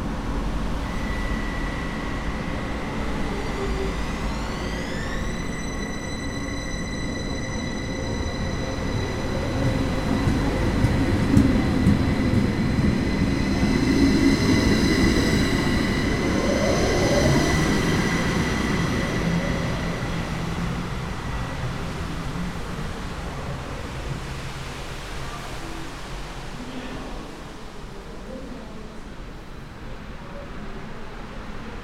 Zürich West, Schweiz - Bahnhof Hardbrücke, Gleis 2
Bahnhof Hardbrücke, Zürich, Gleis 2